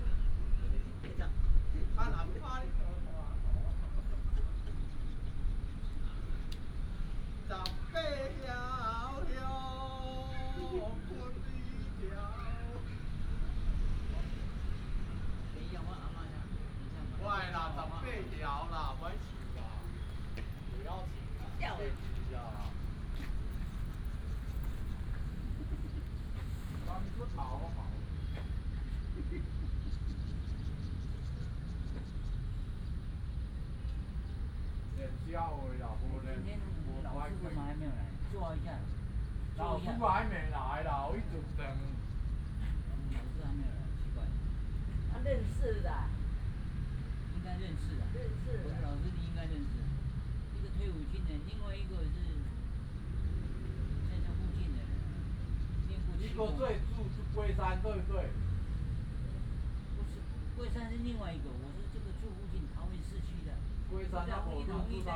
A group of old people in the chat, Traffic sound, in the Park